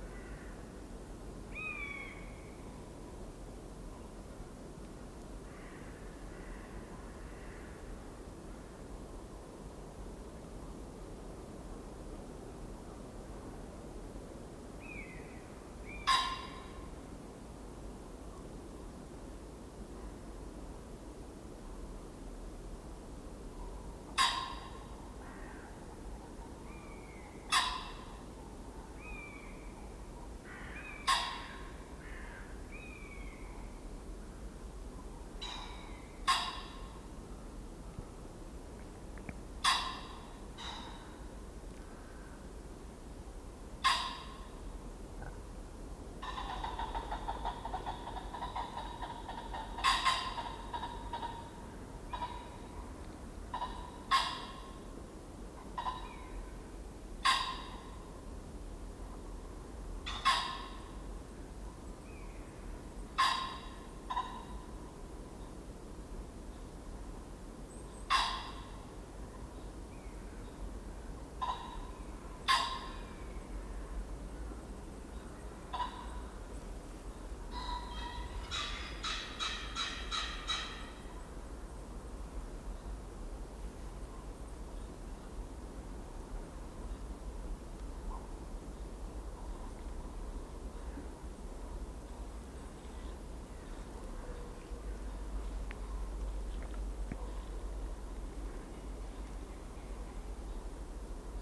Fen Covert, Suffolk, UK - Evening phesants and buzzard; the woodland darkens
A damp, chilly January evening - pheasants squabble before roost, crows chat to each other and a buzzard mews overhead
Halesworth, UK, January 2018